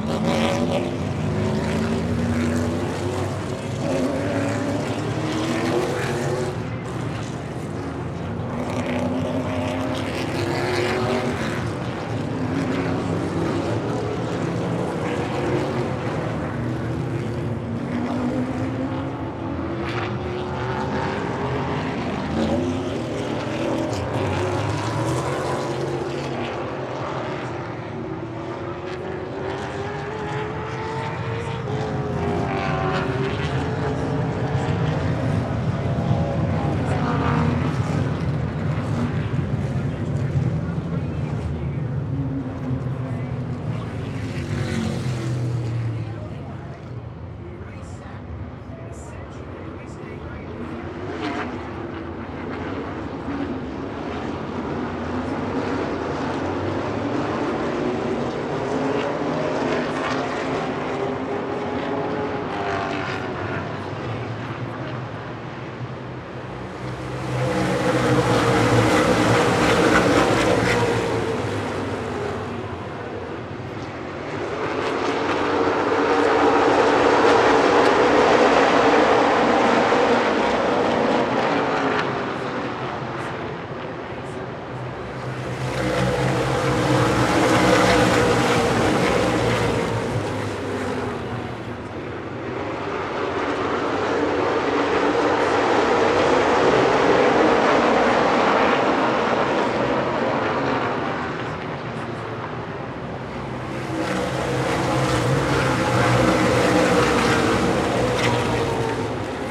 Madison International Speedway - ARCA Midwest Tour Race
Recorded at the Joe Shear Classic an ARCA Midwest Tour Super Late Model Race at Madison International Speedway. This starts just prior to driver introductions and goes through the driver introductions, the command to start engines, the 200 lap race and the victory lane interview with the winner.